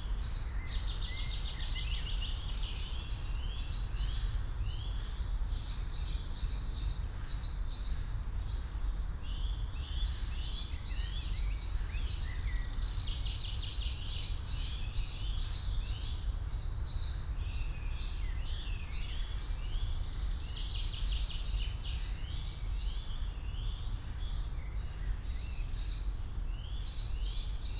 Friedhof Columbiadamm, Berlin - cemetery, spring ambience
Berlin, Alter Garnisonsfriedhof, cemetery ambience in early spring, birds, deep drone of near and distant traffic, cars, aircrafts, trains
(SD702, DPA4060)